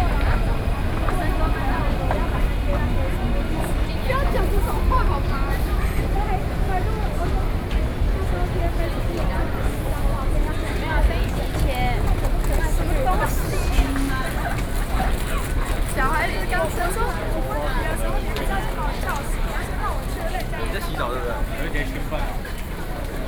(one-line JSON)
{"title": "Taipei Main Station, Taipei City - in the MRT Station", "date": "2012-11-04 16:32:00", "latitude": "25.05", "longitude": "121.52", "altitude": "12", "timezone": "Asia/Taipei"}